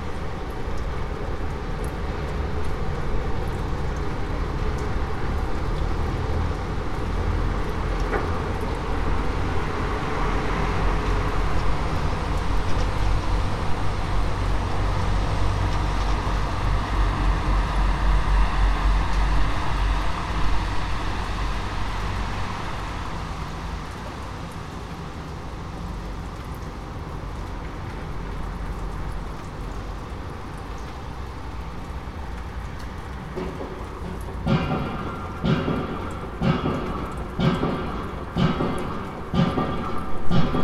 Dekerta, Kraków, Poland - (755 UNI) Construction site closing in
Construction mentioned in (704 UNI) as distant, is now closing in. A huge machine that seems to act like a tremendous hammer is banging closer and closer to my window and it can't be much closer (I suppose).
Recorded with UNI mics of Tascam DR100 MK3.